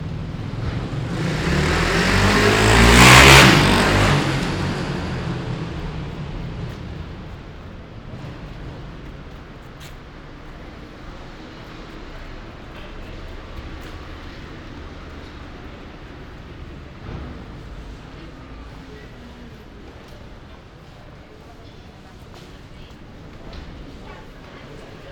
Ascolto il tuo cuore, città. I listen to your heart, city. Chapter CLX - “Outdoor market on Saturday afternoon with light rain at the time of covid19”: Soundwalk
“Outdoor market on Saturday afternoon with light rain at the time of covid19”: Soundwalk
Chapter CLX of Ascolto il tuo cuore, città. I listen to your heart, city.
Saturday, March 6th, 2021. Walking in the outdoor market at Piazza Madama Cristina, district of San Salvario, four months of new restrictive disposition due to the epidemic of COVID19.
Start at 3:47 p.m. end at 4:05 p.m. duration of recording 17'39”
The entire path is associated with a synchronized GPS track recorded in the (kml, gpx, kmz) files downloadable here: